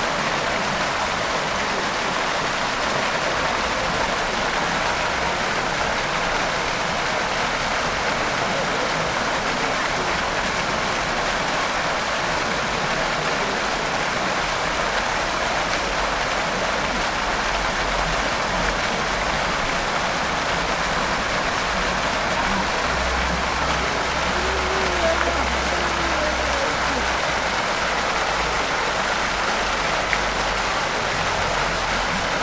Burjasot, Valencia, España - Plaza. fuente. niños
Plaza, fuente, niños
Burjassot, Valencia, Spain, 19 April 2015, ~13:00